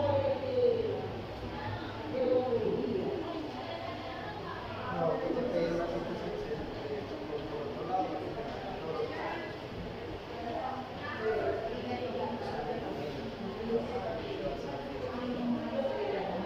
Cl., Medellín, Antioquia, Colombia - Hospital
Información Geoespacial
(latitud: 6.261213, longitud: -75.564943)
IPS Universitaria
Descripción
Sonido Tónico: gente hablando y bulla en general
Señal Sonora: pitido indicador
Micrófono dinámico (celular)
Altura: 6,23 cm
Duración: 3:00
Luis Miguel Henao
Daniel Zuluaga